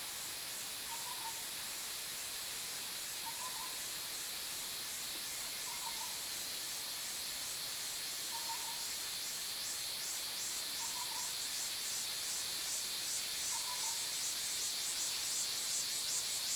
種瓜坑溪, 南投縣埔里鎮 - For downstream valley
Cicada sounds, Bird sounds, stream, For downstream valley
Zoom H2n MS+XY
Nantou County, Puli Township, 華龍巷, 8 June 2016, 07:44